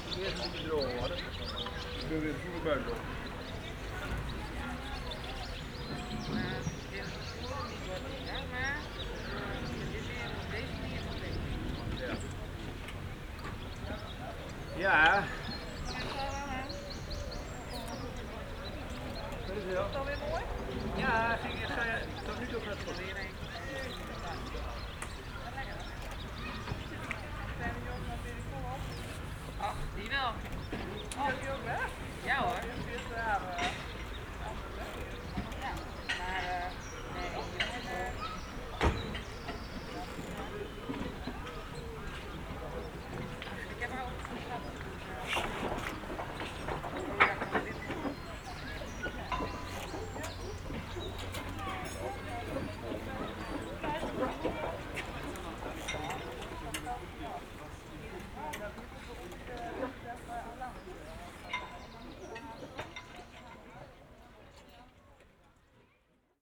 {"title": "workum, het zool: marina, berth c - the city, the country & me: marina berth", "date": "2012-08-04 19:31:00", "description": "the city, the country & me: august 4, 2012", "latitude": "52.97", "longitude": "5.42", "timezone": "Europe/Amsterdam"}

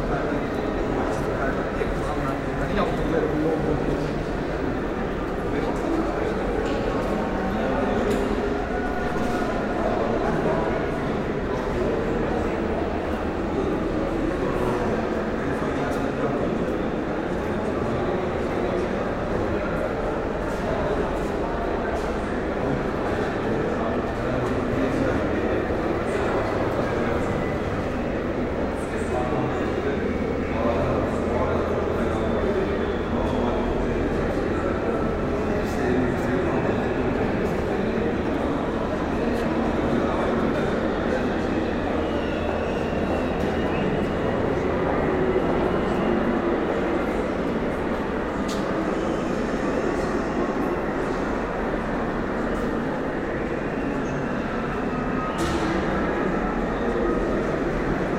{"title": "Sounds of the Istanbul Biennial", "description": "Ambient sounds of the Antrepo No.3 exhibition hall during the 11th Istanbul Biennial", "latitude": "41.03", "longitude": "28.98", "altitude": "4", "timezone": "Europe/Tallinn"}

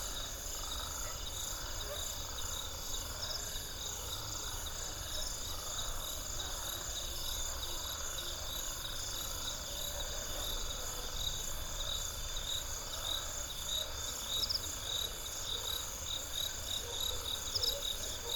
{"title": "Chikuni Mission, Monze, Zambia - night sounds...", "date": "2012-11-15 23:46:00", "description": "…night sounds near Chikuni Mission…", "latitude": "-16.42", "longitude": "27.55", "altitude": "1154", "timezone": "Africa/Lusaka"}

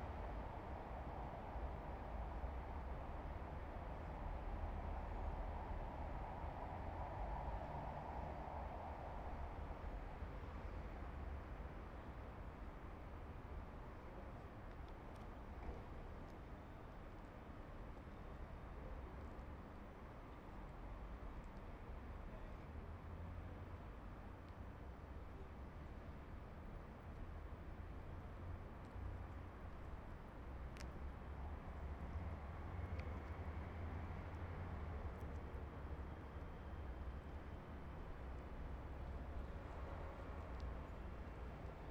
{"title": "Rijeka, Croatia, Railway Station - Waiting", "date": "2008-07-23 21:10:00", "latitude": "45.33", "longitude": "14.43", "altitude": "6", "timezone": "Europe/Zagreb"}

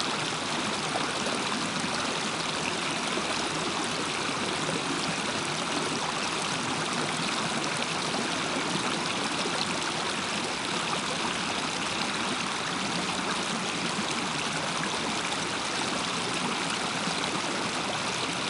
{"title": "Strawberry Park Natural Hot Springs, CO, USA - Hot Spring Creek", "date": "2016-01-03 10:08:00", "description": "Recorded with a pair of DPA 4060s into a Marantz PMD661.", "latitude": "40.56", "longitude": "-106.85", "altitude": "2294", "timezone": "America/Denver"}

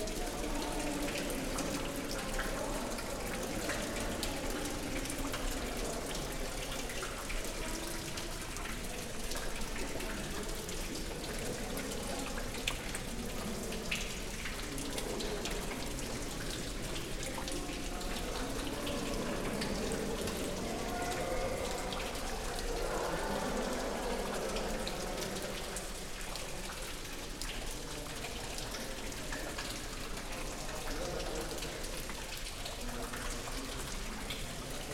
{"title": "Estr. do Convento, Tomar, Portugal - Convento de Cristo Fountain", "date": "2017-09-20 17:32:00", "description": "Convento de Cristo in Tomar. Hall with fountain on the center, water running, people walking and talking resonating in the space. Recorded with a pairt of Primo 172 in AB stereo configuration onto a SD mixpre6.", "latitude": "39.60", "longitude": "-8.42", "altitude": "115", "timezone": "Europe/Lisbon"}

